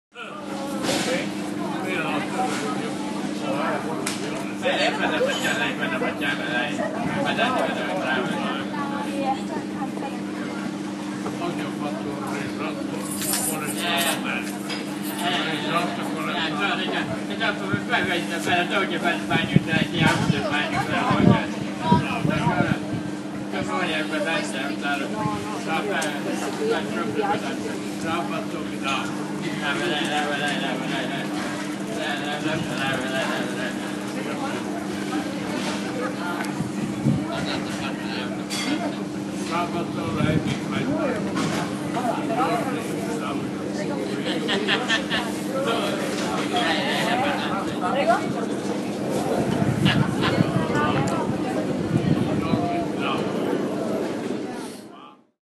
Piazza Sordello 46100 Mantua, Italien - People
recorded with iPhone 4s, Hindenburg Field Recorder